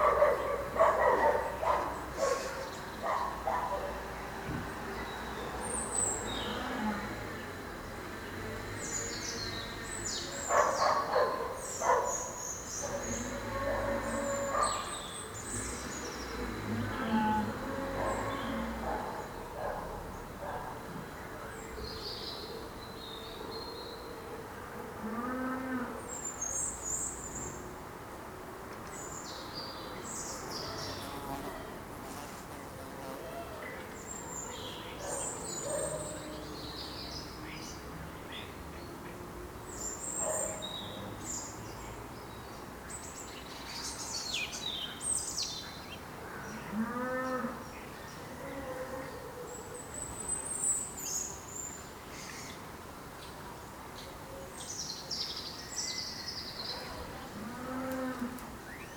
{"title": "Pairana (PV), Italy - Autumn morning close to countryside", "date": "2012-10-20 10:30:00", "description": "Birds, dogs, church bells, a distant cow. Rumblings from the sky due to airplanes landing in Milan try to corrupt the peace of this place", "latitude": "45.32", "longitude": "9.29", "altitude": "89", "timezone": "Europe/Rome"}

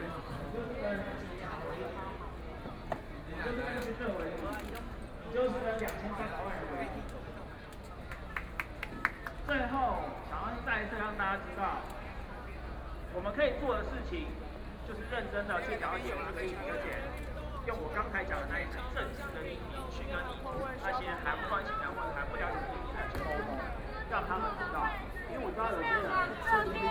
{"title": "Qingdao E. Rd., Taipei City - Student activism", "date": "2014-03-23 20:23:00", "description": "Student activism, Walking through the site in protest, People and students occupied the Legislative Yuan", "latitude": "25.04", "longitude": "121.52", "altitude": "13", "timezone": "Asia/Taipei"}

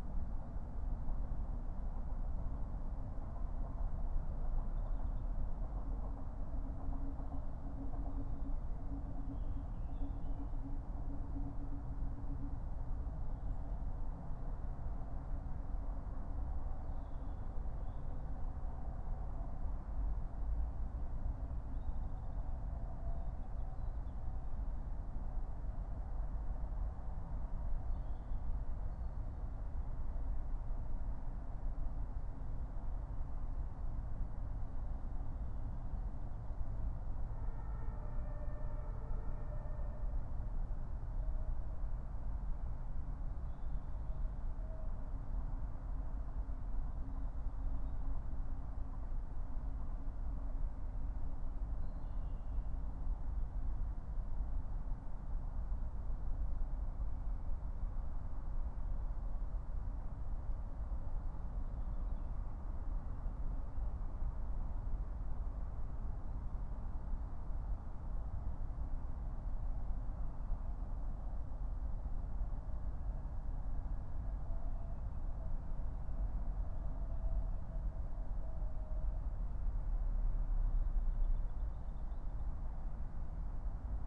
{"date": "2022-04-12 05:00:00", "description": "05:00 Berlin, Königsheide, Teich - pond ambience", "latitude": "52.45", "longitude": "13.49", "altitude": "38", "timezone": "Europe/Berlin"}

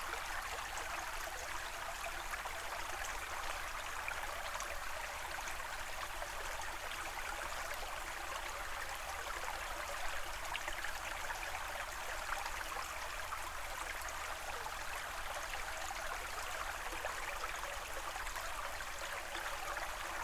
{"title": "Bowen's Creek, Pleasanton Township, MI, USA - Bowen's Creek - February", "date": "2016-02-08 13:55:00", "description": "Bowen's Creek on a Monday afternoon, running westward and freely over twigs and small roots and tree limbs. Recorded about a foot back from creek's edge, approx. three feet above. Snow on the ground. Stereo mic (Audio-Technica, AT-822), recorded via Sony MD (MZ-NF810, pre-amp) and Tascam DR-60DmkII.", "latitude": "44.46", "longitude": "-86.16", "altitude": "232", "timezone": "America/Detroit"}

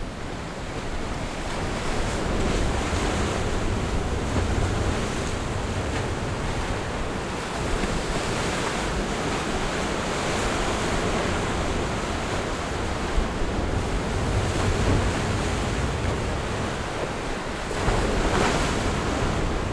{"title": "和平里, Chenggong Township - sound of the waves", "date": "2014-09-06 14:44:00", "description": "In the wind Dibian, Sound of the waves, Very hot weather, Wind and waves are very strong\nZoom H6 MS+ Rode NT4", "latitude": "23.07", "longitude": "121.35", "altitude": "10", "timezone": "Asia/Taipei"}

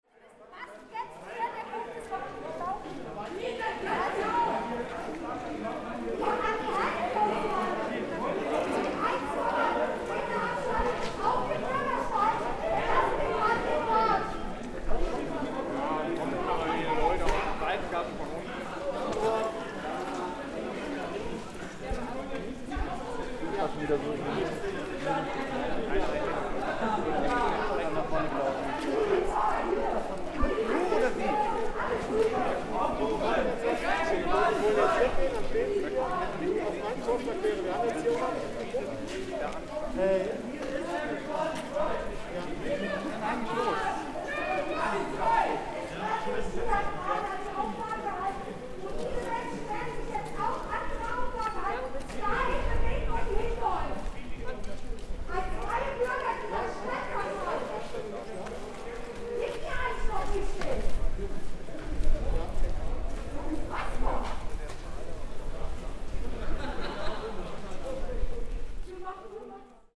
{"title": "Markt, Frankfurt am Main, Deutschland - 1st of May Demonstration", "date": "2020-05-01 12:05:00", "description": "Discussions with the police, voices, slogans for the freedom of movement, voices of police men talking to their head set (My proposal would be, we have a lot of groups here...).", "latitude": "50.11", "longitude": "8.68", "altitude": "104", "timezone": "Europe/Berlin"}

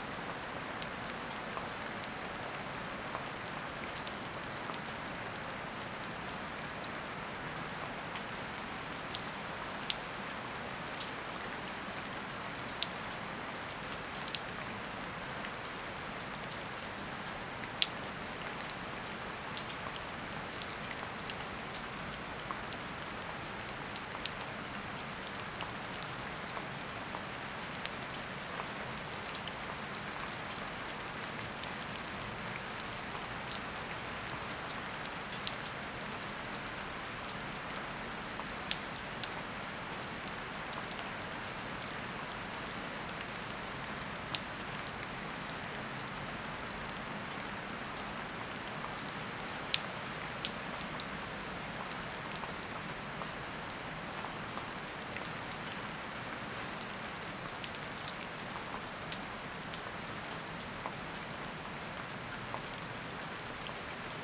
{"title": "район Орехово-Борисово Северное, Москва, Россия - Rainy night.", "date": "2014-04-15 23:35:00", "description": "Sennh. MKH-416 --> Marantz PMD-661 mod --> RX3(Eq, Gain).", "latitude": "55.63", "longitude": "37.72", "altitude": "149", "timezone": "Europe/Moscow"}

